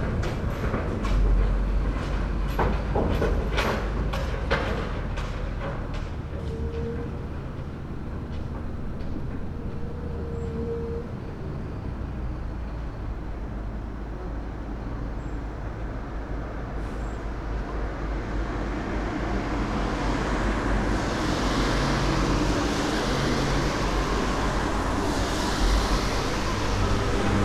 berlin: ziegrastraße - A100 - bauabschnitt 16 / federal motorway 100 - construction section 16: treatment company to manage secondary raw materials
excavator with clamshell attachment sorting scrap
the motorway will pass the east side of this territory
the federal motorway 100 connects now the districts berlin mitte, charlottenburg-wilmersdorf, tempelhof-schöneberg and neukölln. the new section 16 shall link interchange neukölln with treptow and later with friedrichshain (section 17). the widening began in 2013 (originally planned for 2011) and shall be finished in 2017.
january 2014